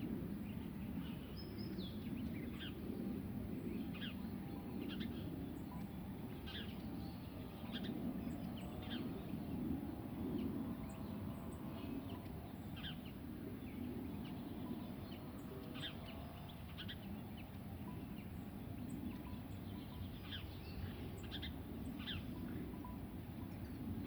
Freixo de Espada À Cinta, Portugal
Freixo de Espada À Cinta, Praia Fluvial, Portugal Mapa Sonoro do Rio Douro Douro River Sound Map
20 February 2014